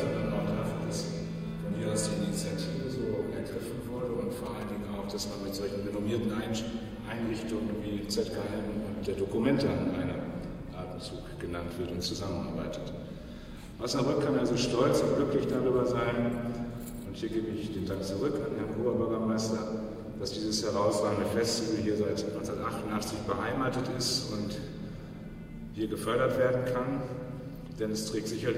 osnabrück, kunsthalle dominikanerkirche, emaf vern - osnabrück, kunsthalle dominikanerkirche, rede
ausschnitt aus eröffnungsrede zum emaf festival 2008
project: social ambiences/ listen to the people - in & outdoor nearfield recordings
kunsthalle dominikanerkirche, hasemauer